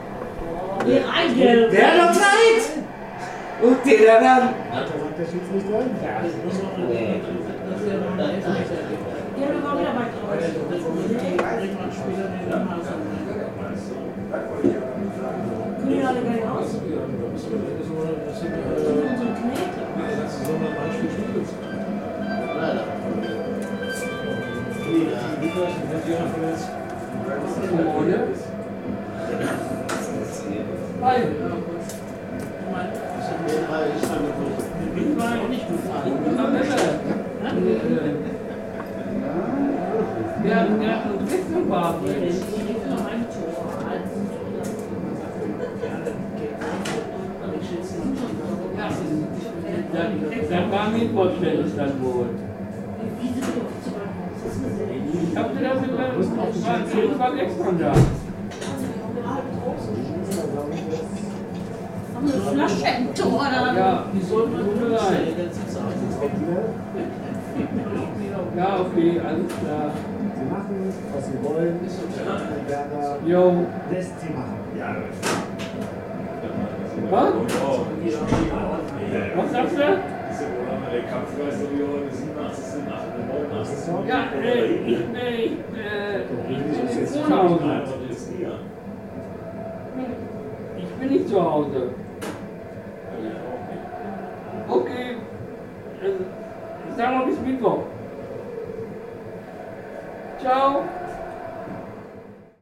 Frohnhausen, Essen, Deutschland - zum postreiter
zum postreiter, wiesbadener str. 53, 45145 essen
Essen, Germany, May 2010